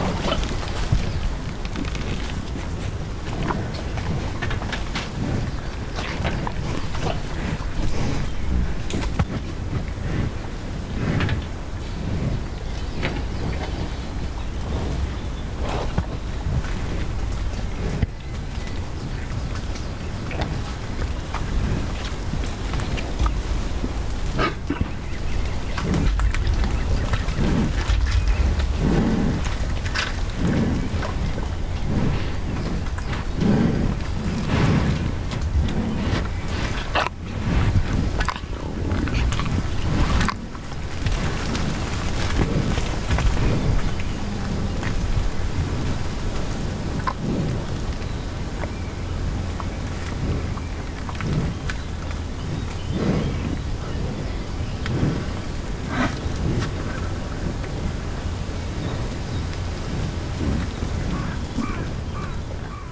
Very Close to Hereford Cattle at Bredenbury, Herefordshire, UK - On The Farm
I am standing quite still against the fence while a number of Herefords come close enough to sniff my coat and chew the shotgun mic cover. Eventually they get bored and begin to wander away. Recorded with a Mix Pre 3, 2 Sennheiser MKH 8020s and a Rode NTG3.